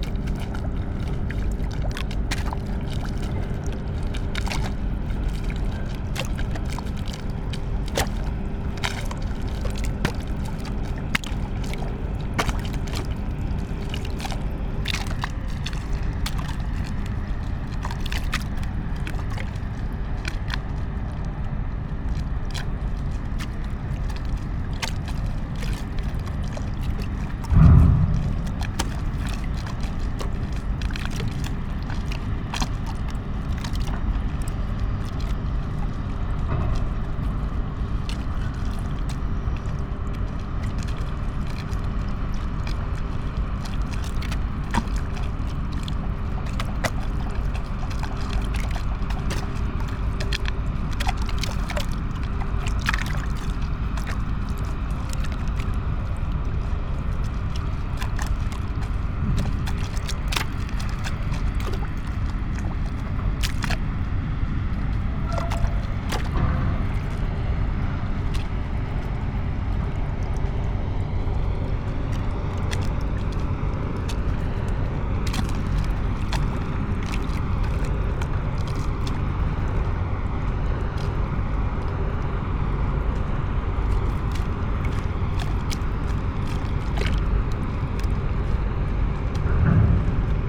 Plänterwald, Berlin, Germany - lapping waves, concrete wall, cement factory
river Spree
Sonopoetic paths Berlin